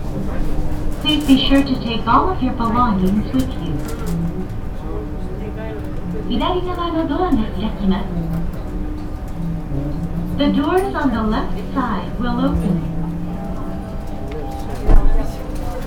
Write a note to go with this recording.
in the airports departure duty free zone, then entering and driving with the internal gate shuttle train, international city scapes - social ambiences and topographic field recordings